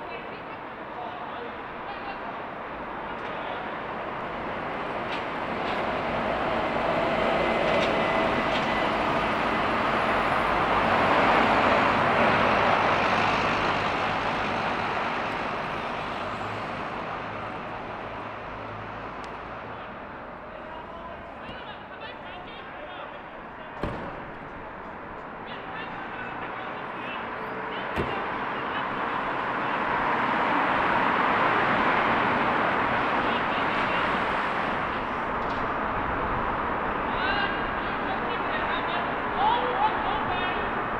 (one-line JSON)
{"title": "Great Northern Mall, Belfast, UK - Great Victoria Street", "date": "2020-03-27 13:45:00", "description": "One of the busier streets of Belfast, surrounded by the bus station, Europa Hotel, Opera theatre, and Crown Liquor Salon reflects how life just stopped, for everyone. However, while most things are bordered and shut down there is always a local squabble at the nearby Tesco Express.", "latitude": "54.59", "longitude": "-5.93", "altitude": "13", "timezone": "Europe/London"}